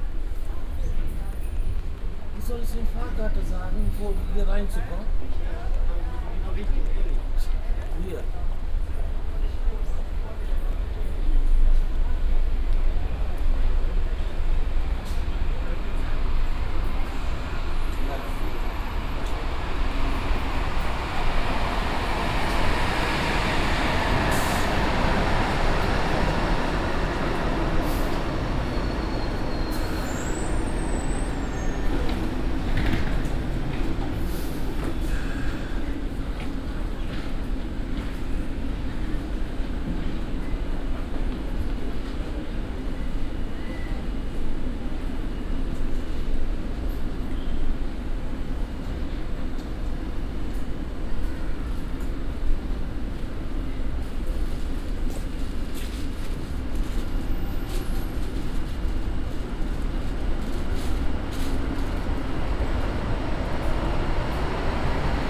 At the subway stop of the main station.
soundmap nrw: social ambiences/ listen to the people - in & outdoor nearfield recordings
hbf, u-bahn haltestelle, 24 January 2009